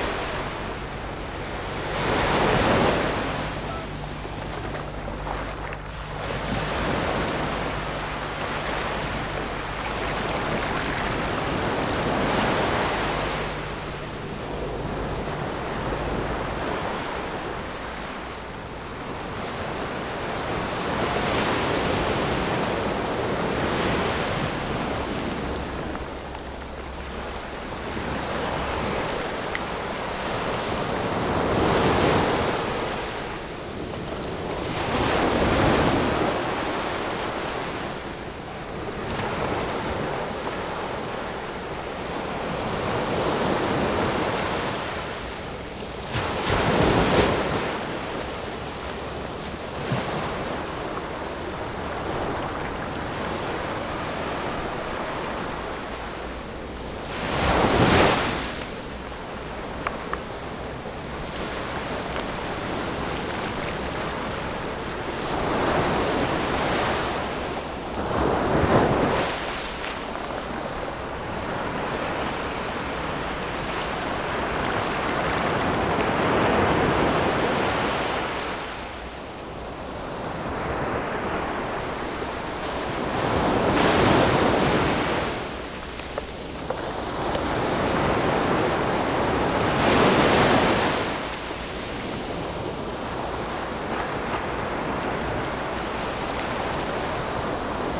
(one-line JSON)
{"title": "Honeymoon Bay, Yilan - the waves", "date": "2014-07-29 16:11:00", "description": "Sound of the waves, Very hot weather, In the beach\nZoom H6+ Rode NT4", "latitude": "24.93", "longitude": "121.89", "altitude": "3", "timezone": "Asia/Taipei"}